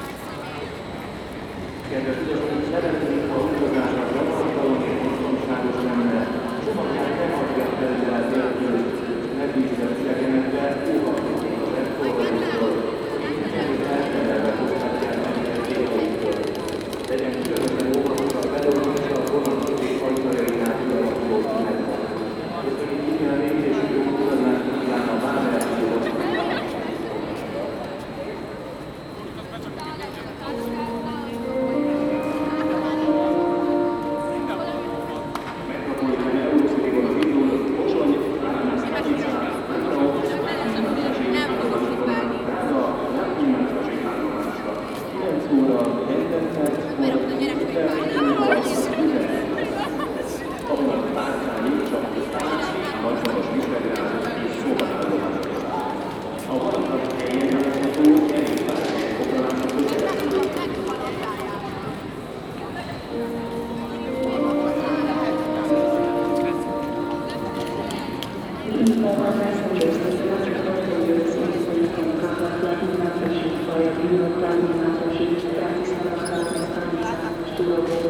Budapest, Budapest-Nyugati, Hungary - Budapest és Prága között
Ěrtesítés a vonat indulásáról Budapest és Prága között